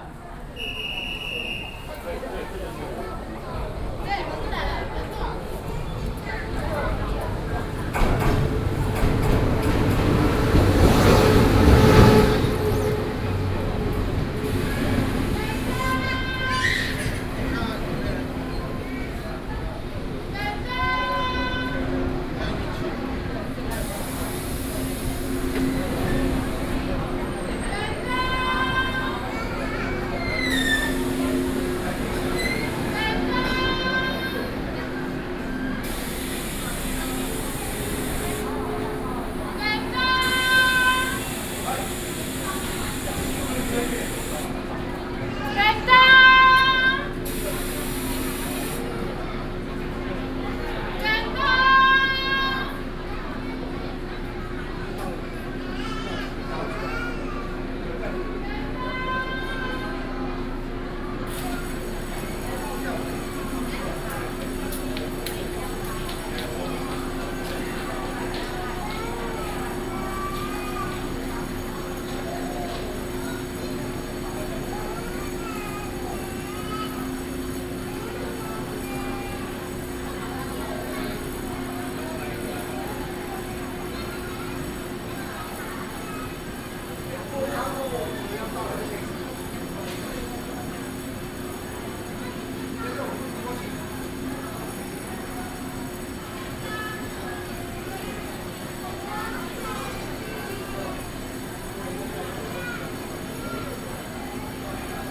Ruifang Station, New Taipei City, Taiwan - In the train station platform
In the train station platform
Sony PCM D50+ Soundman OKM II